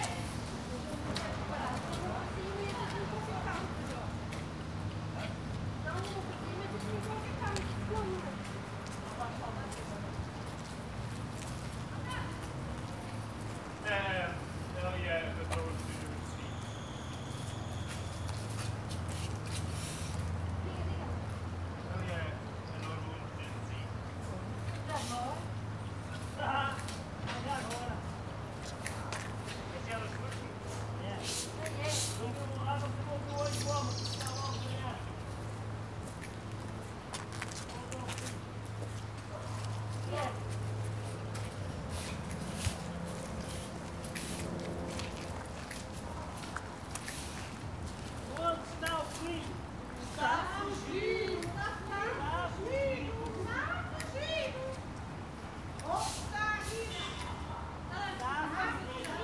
Porto, Jardins do Palácio de Cristal do Porto - chats of the gardening crew
the maintenance workers and the garners in good mood, talking and joking during their duty.